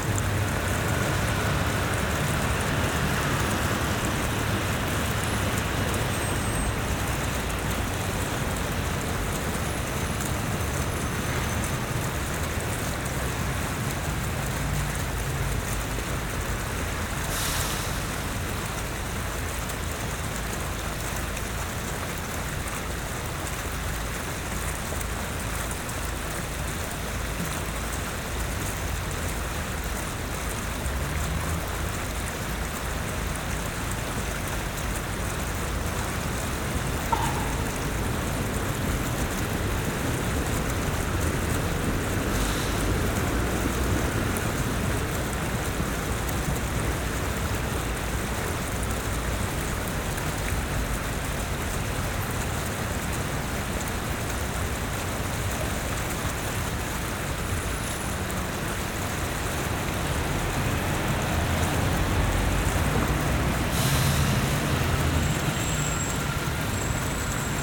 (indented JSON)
{
  "title": "Palais de la Dynastie, Kunstberg, Brussel, Belgique - Fountain",
  "date": "2022-06-15 15:04:00",
  "description": "Trams and cars nearby.\nTech Note : Ambeo Smart Headset binaural → iPhone, listen with headphones.",
  "latitude": "50.84",
  "longitude": "4.36",
  "altitude": "63",
  "timezone": "Europe/Brussels"
}